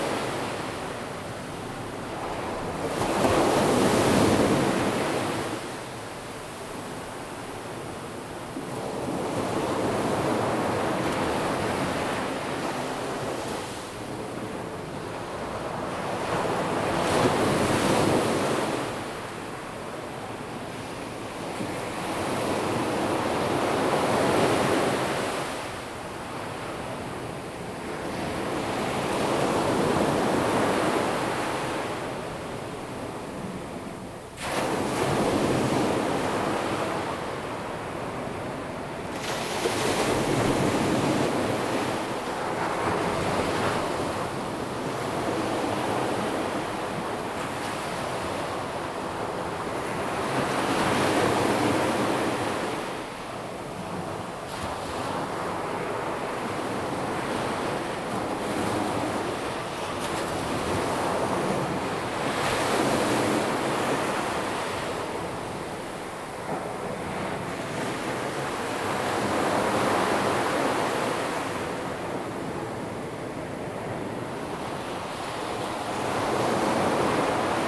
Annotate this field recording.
Big Wave, Sound of the waves, Zoom H2n MS+H6 XY